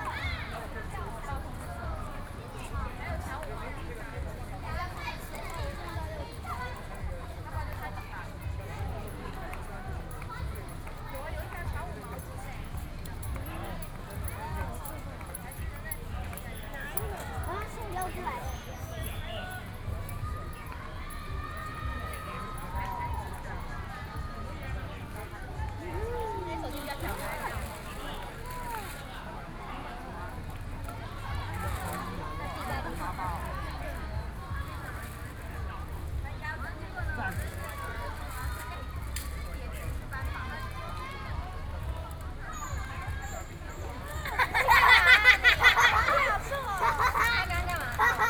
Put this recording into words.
The crowd, Children's sports competitions, Sony PCM D50, Binaural recordings